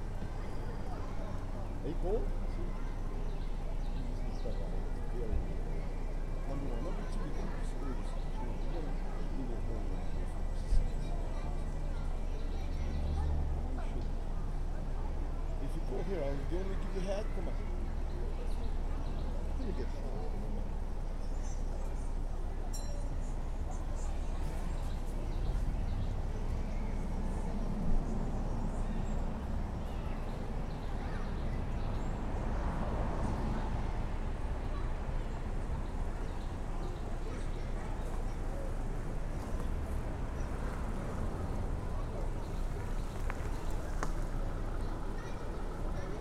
Peachtree Dunwoody Rd, Atlanta, GA, USA - Little Nancy Creek Park
In front of the Little Nancy Creek Park play area. The parking lot is behind the recorder and children are heard playing at the playground. A louder group of children is heard along the park path to the left and in front of the recorder. Adults are heard talking amongst themselves. Leaves blow across the ground in the wind. Minor EQ was used to cut out a little bit of the traffic rumble.
[Tascam Dr-100mkiii & Primo Em272 omni mics]
Georgia, United States, 21 February 2021